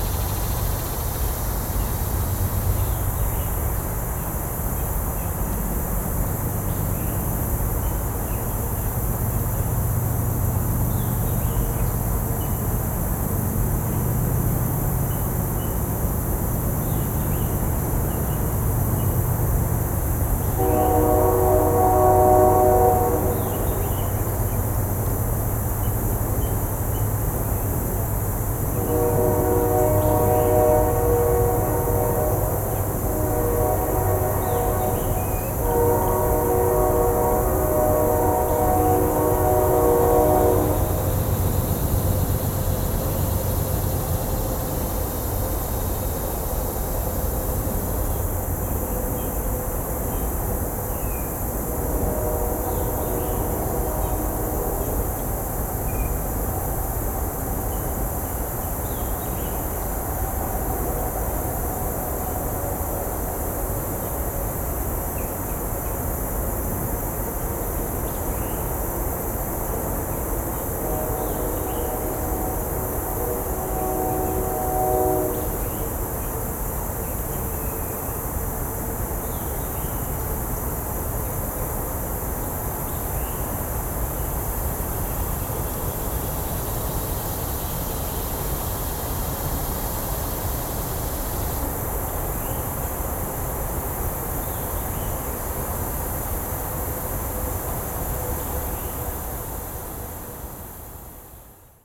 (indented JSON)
{
  "title": "Route 66 Times Beach, Eureka, Missouri, USA - Route 66 Times Beach",
  "date": "2020-09-13 15:12:00",
  "description": "Route 66 State Park is a recreational area at the site of the Times Beach ghost town. Times Beach was abandoned by its residents in the 1980s after it was discovered to be contaminated by the hazardous chemical dioxin that had been sprayed on its dirt streets in waste oil to keep the dust down. The ground was incinerated and it was taken off the Superfund hazardous site list. The area was then made into a park commemorating historical U.S. Route 66 that passed by the town. Recording was made in a forested area of the park but there was still a continuous traffic drone from nearby Interstate Highway 44. A train passes and sounds its horn at 2:02.",
  "latitude": "38.51",
  "longitude": "-90.61",
  "altitude": "141",
  "timezone": "America/Chicago"
}